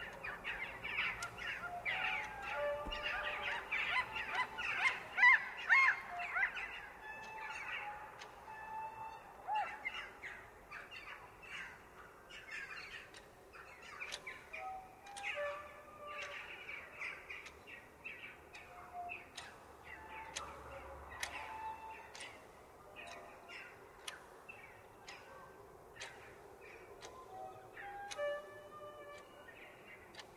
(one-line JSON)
{"title": "East Visby, Visby, Sweden - Sad factory", "date": "2005-08-25 16:19:00", "description": "\"Sad factory\" near Visby (near Terranova area) - a squeaky factory which sounds musical. One can distinguish \"music instruments\" like \"flutes\" and \"drums\" among these noises. Squeaky melodies were more complicated if the weather were windy. Seagulls, flag masts and cars are on background.", "latitude": "57.63", "longitude": "18.32", "altitude": "54", "timezone": "Europe/Stockholm"}